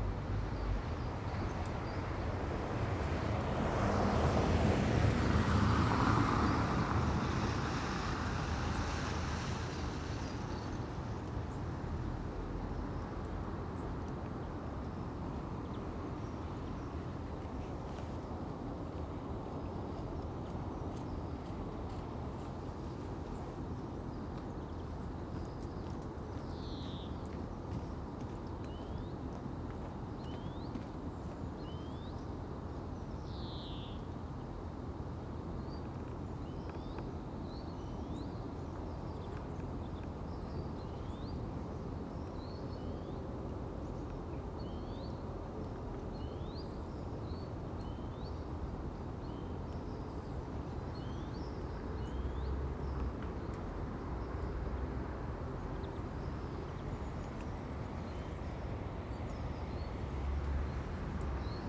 Contención Island Day 38 outer east - Walking to the sounds of Contención Island Day 38 Thursday February 11th
The Poplars High Street Moorfield Little Moor Jesmond Dene Road Osborne Road Mitchel Avenue
Traffic slowed
by snow
and traffic lights
Women sit in cars
talking on phones
A long-tailed tit
flies across the road
pulling its tail behind it
A runner
running with care